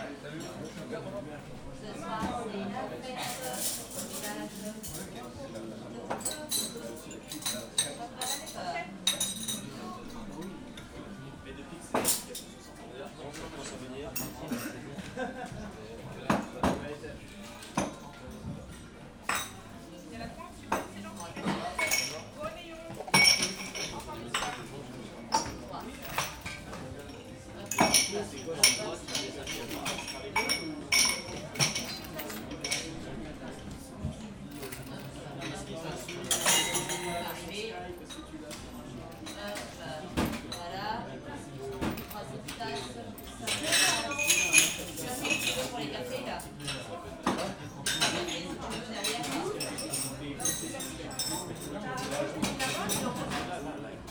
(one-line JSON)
{"title": "Chartres, France - Crowded bar", "date": "2018-12-31 15:35:00", "description": "On the last day of the year 2018, people take fun. We are here in a crowded bar. Chartres is a discreet city. People speak softly.", "latitude": "48.45", "longitude": "1.49", "altitude": "163", "timezone": "Europe/Paris"}